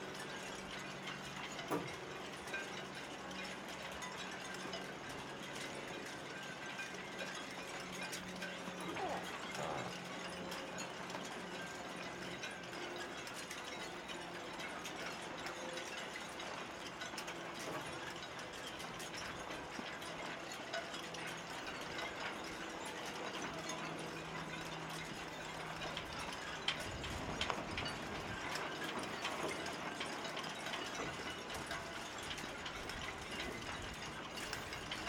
Zürich, Mythenquai, Schweiz - Bootshafen
Segelmasten im Wind. Kleines Flugzeug.